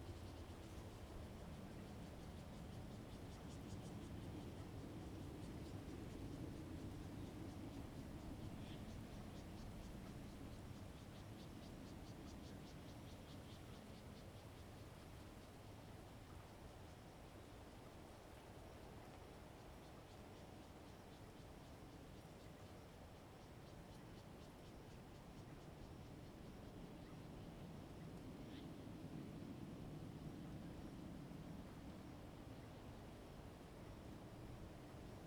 都蘭村, Donghe Township - Small village
Small village, the sound of aircraft, The weather is very hot
Zoom H2n MS +XY
都蘭林場 Donghe Township, Taitung County, Taiwan, September 2014